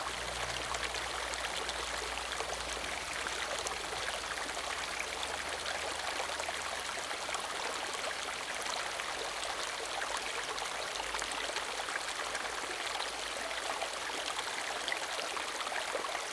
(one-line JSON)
{"title": "中路坑溪, 桃米里 Puli Township - Stream sound", "date": "2016-07-13 07:26:00", "description": "Stream sound\nZoom H2n Spatial audio", "latitude": "23.94", "longitude": "120.92", "altitude": "492", "timezone": "Asia/Taipei"}